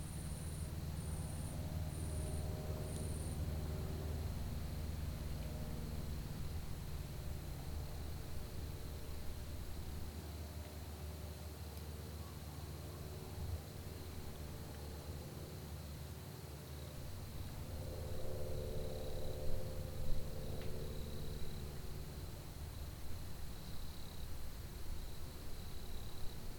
Entrelacs, France - murmures d'insectes
Parking du chalet belvédère du Sapenay, quelques insectes arboricoles, passage d'un avion de tourisme .